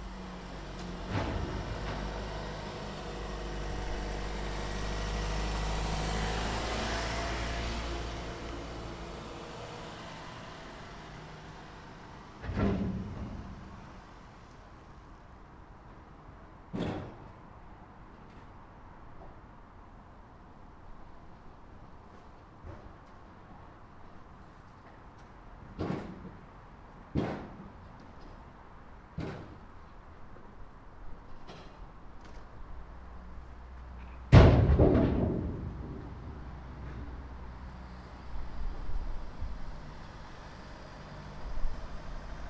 Listening to recycling #WLD2018